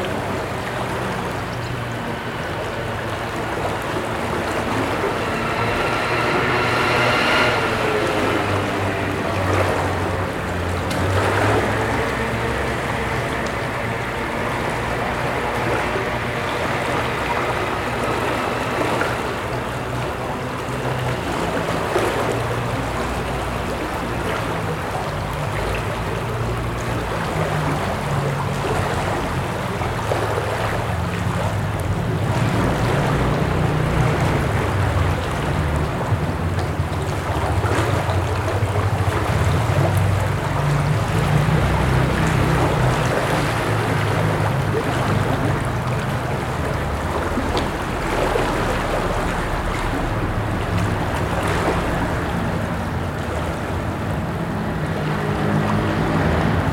Allée de Barcelone, Toulouse, France - water Sound Lock Boat
water Sound, Lock, trafic Sound road